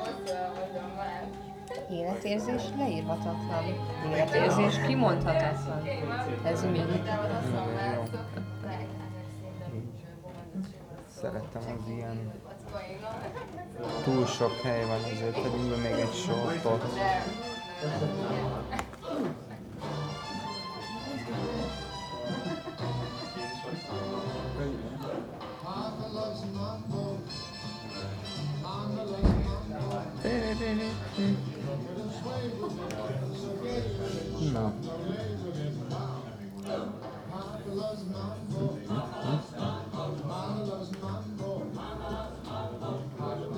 Budapest, Palotanegyed, Magyarország - Prága kávézó
Baross u., Hungary, 19 April